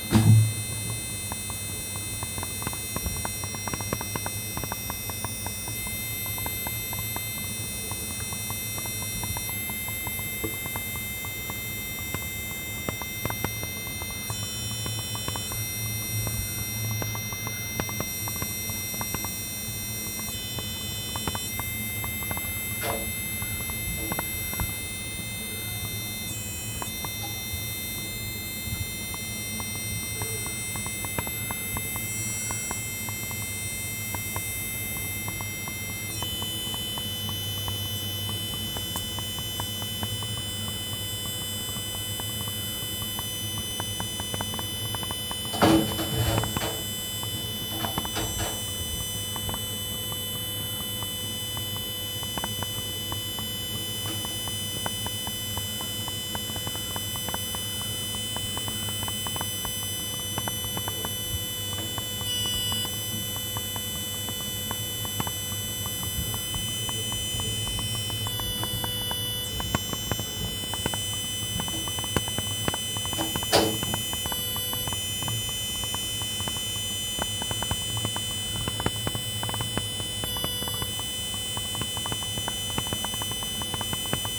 Poznan, Kochanowskiego street - lightbulb noise
lightbulb making rather high pitched buzzing sound when turned on. Pitch of the buzz gets modulated somehow, I didn't influence it in any way. It's how this lightbulb sounds by itself. You can also hear sounds from the street and from nearby apartments. Repeating thump also appears but I can't remember were I came from, possibly wasn't aware of it at the time of the recording. As the lightbulb was attached very high I had to keep my hand straight up for a few minutes, thus the handling nose. but I think it only adds to the recording, making nice rhythmic composition in addition to the buzz. (sony d50)
Poznań, Poland